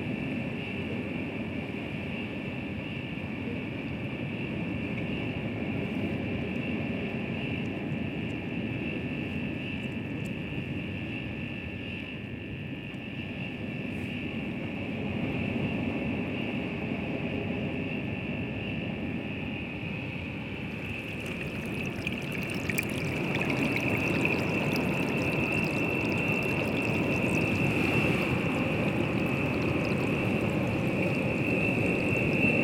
España - bajamar senoidal WLD 2015
Derivè recorded with Zoom H6, and transducer with a digital reproductor. La Barranquea, Valle de Guerra. Isla de Tenerife. WLD 2015 #WLD2015
Santa Cruz de Tenerife, Spain